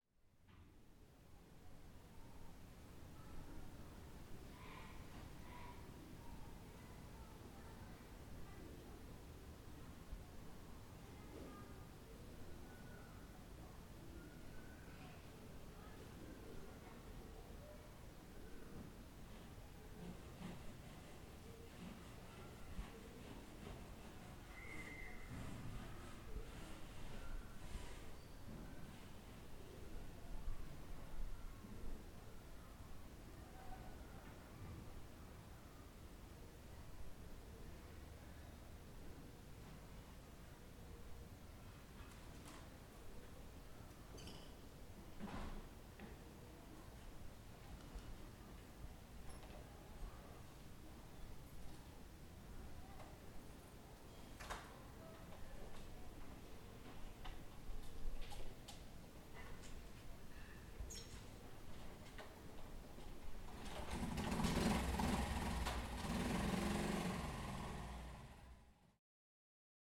The sound of a motorbike in the background.
Kerkira, Greece, 16 April 2019